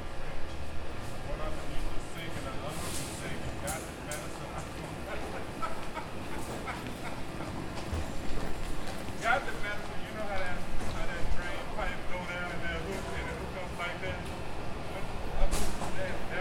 North Capitol Street, Washington, DC, USA - Union Station Metro
Down in the metro station at Union Station.
Thursday afternoon.
15 December 2016, 12:07